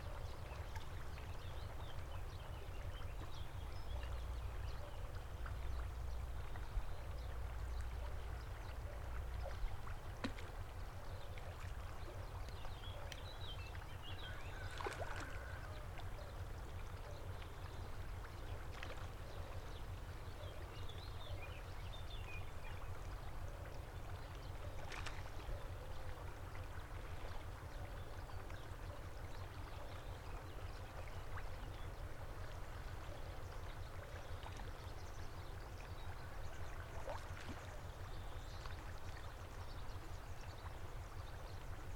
Manha ao pe do rio em Nagozelo do Douro. Mapa Sonoro do Rio Douro. Morning soundscape in Nagozelo do Douro, Portugal. Douro River Sound Map.
17 August, 09:00